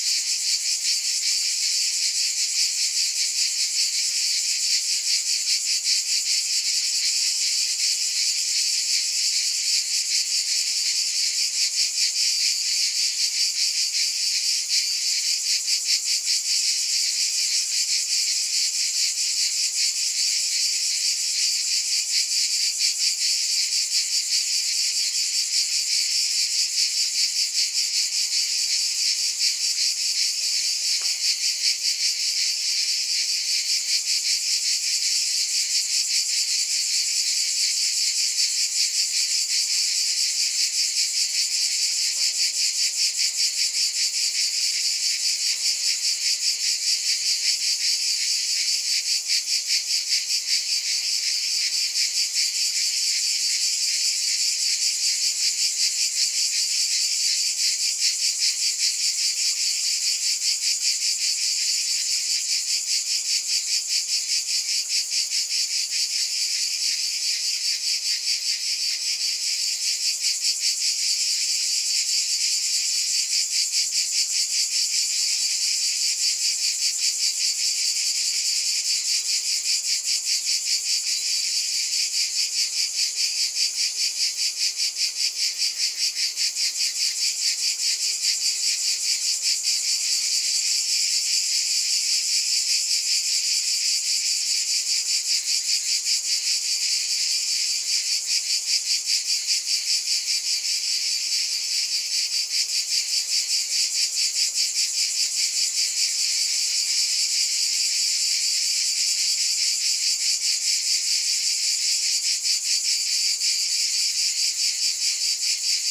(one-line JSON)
{"title": "Calot, Azillanet (France) - Cicada during summer (South of France)", "date": "2020-07-22 15:00:00", "description": "Sound recording of cicada singing during the summer in South of France (Minervois).\nRecorded by a setup ORTF with 2 Schoeps CCM4\nOn a Sound Devices Mixpre 6 recorder", "latitude": "43.33", "longitude": "2.75", "altitude": "194", "timezone": "Europe/Paris"}